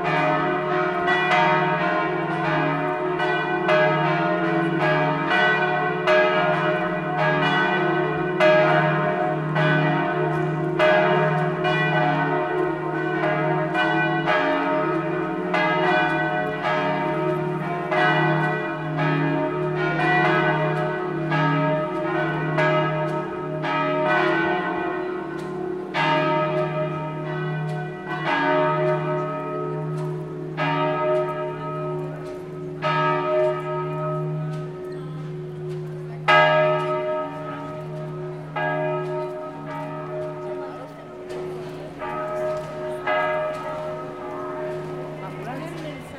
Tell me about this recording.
The bells of the Shrine of Loreto, tourists who ask you take a picture, a little girl playing near the fountain. les cloches du sanctuaire de Loreto, des touristes qui demandent qu'on les prennent en photos, une petite fille qui joue près de la fontaine